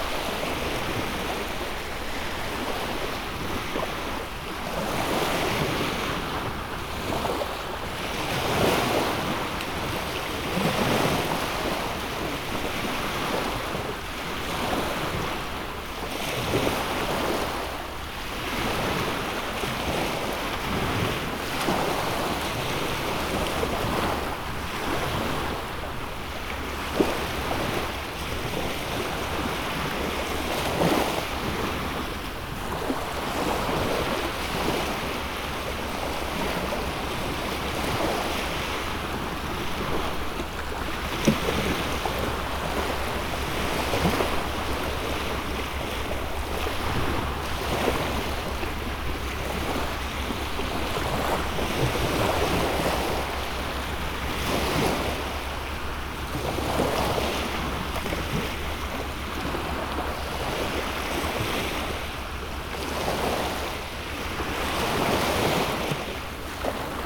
Praia Henriqueta Catarina - R. da Alegria, 18 - Lot. Nova Itaparica, Itaparica - BA, 44460-000, Brasilien - low tide
Gently rolling waves on the beach at low tide.